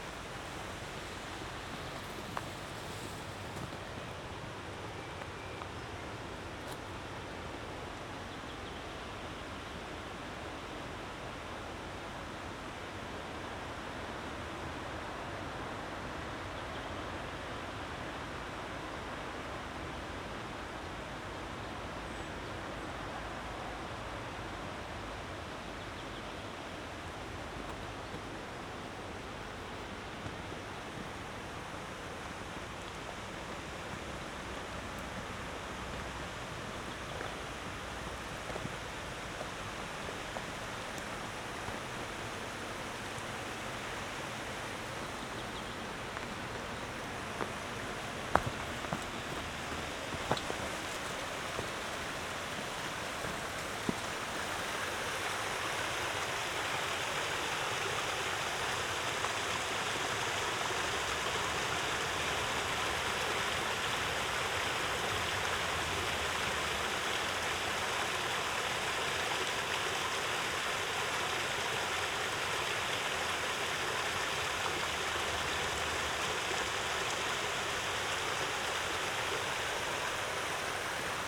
Maribor, Drava, near power station - walk along little canyon
below the street, alongside river Drava, i found a hidden narrow canyon, quite difficult to get here. the water of a little creek runs down in cascades. hum of the river power station.
(SD702, AT BP4025)